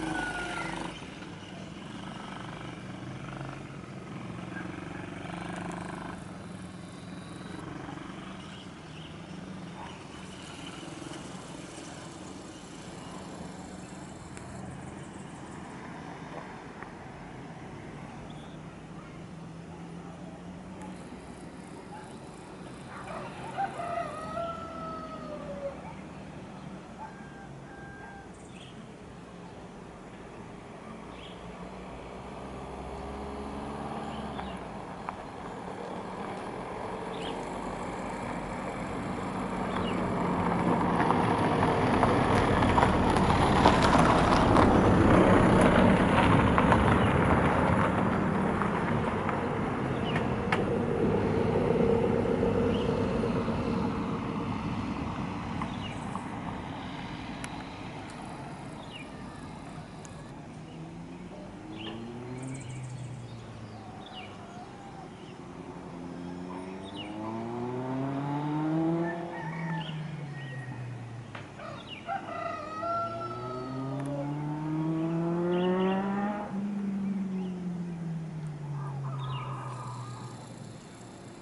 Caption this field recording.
"en ces lieux qui évoquent la vie protégée, loin du bruit et la fureur du monde moderne", voici donc ce qu'on entend (et ce qu'on voit) du Chemin Lisière de la forêt. Je teste l'appareil photo Sony DSC-HX60V en quête de trouver une "caméscope de dépannage" (je rêve de mieux mais c'est cher et lourd). Ce qu'on peut faire est cadrer, zoomer, faire des traveling plus ou moins et éviter de trop bouger. Le son est très bien rendu, l'image est exploitable mais il faut se contenter d'un réglage unique (pas de correction d'exposition ou de réglage personnel, faible dynamique pour les nuages). À la fin une séquence tournée au smartphone (galaxy s8), c'est différent mais pas meilleur et même décevant (surtout le son!!!) (pourtant le smartphone exploite un débit vidéo beaucoup plus lourd et une qualité de couleur meilleure pour les plantes vu de près). Pour montrer des aspects sonores et visuels de CILAOS, le petit appareil photo convient bien mieux.